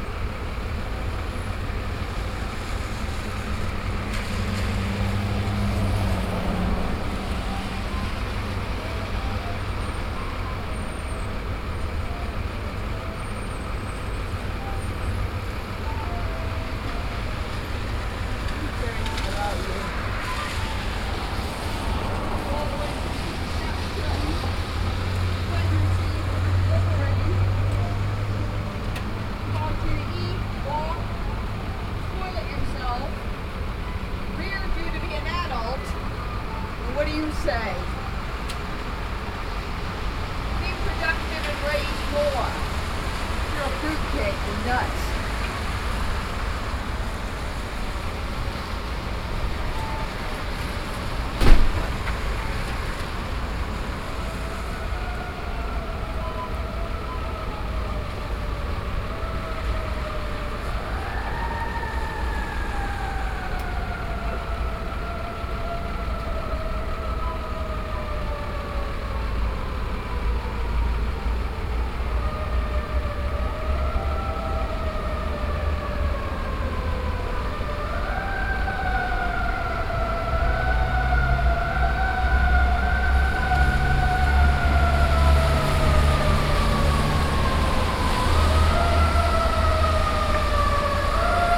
Washington DC, 11th St NW, In front of Hotel Harrington
USA, Virginia, Washington DC, Flute, Door, Road traffic, Binaural
16 November 2011, 20:35